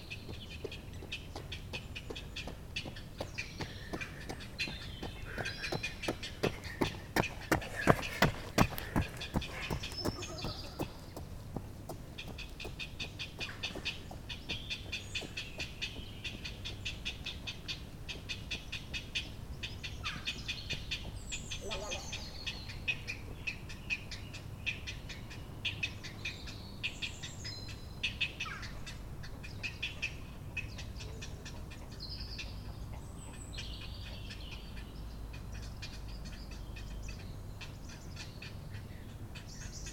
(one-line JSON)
{"title": "Atlantic Pond, Ballintemple, Cork, Ireland - After Sunset: Egret, Heron, Fox Screaming, Jogger", "date": "2020-04-25 21:20:00", "description": "Little Egrets and Herons nest on the Island. The Egrets make the strange, deep, wobbling gurgling sounds. The rhythmic call is Heron chicks in the nest.\nRecorded with a Roland R-07.", "latitude": "51.90", "longitude": "-8.43", "altitude": "3", "timezone": "Europe/Dublin"}